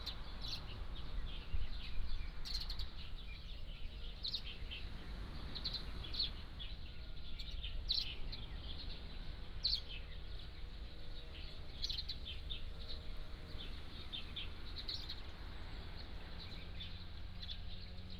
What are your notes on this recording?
In the plaza, Birds singing, Sound of the waves, There mower noise nearby